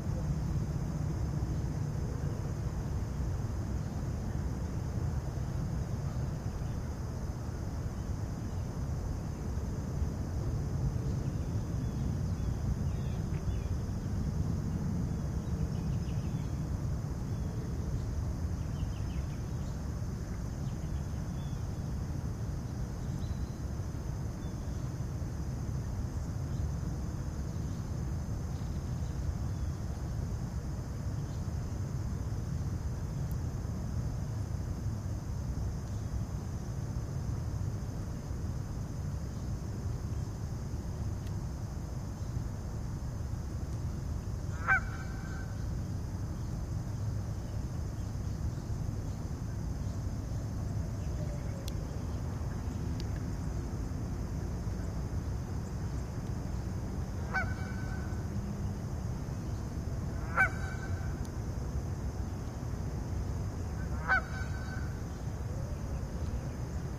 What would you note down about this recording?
Geese, Fishing, Birds, Nature preserve.